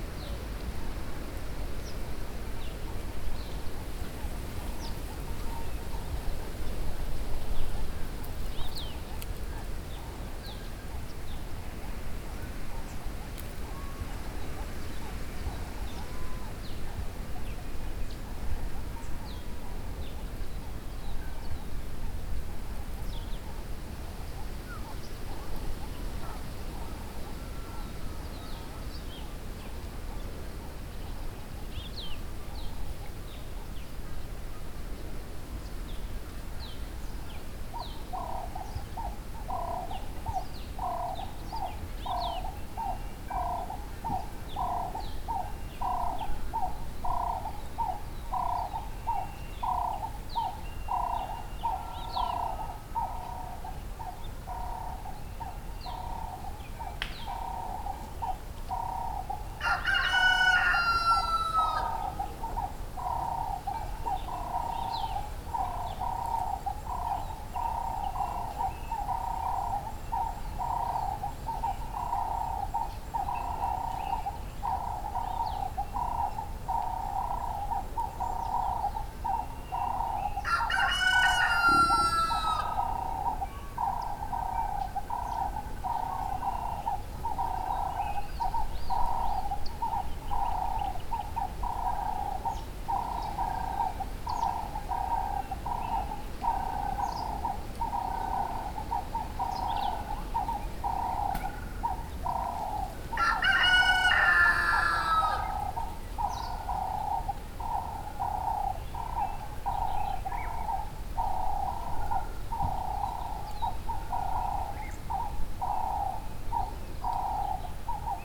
Lupane, Zimbabwe - morning sounds at CoCont...
...we are at CoCont (Cont's place) somewhere in the Lupane bushland... the road between Bulawayo and Vic Falls is near by and a passing car or bus is heard occasionally... Sunday morning...
Matabeleland North, Zimbabwe, 14 October 2018